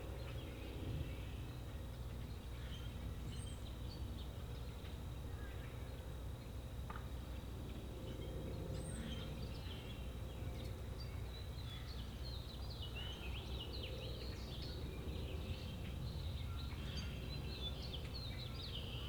Waters Edge - Spring in the Backyard
After days of cool rainy weather we finally had a sunny and relatively warm day which brought out a lot of the wildlife sounds.
21 April, 18:10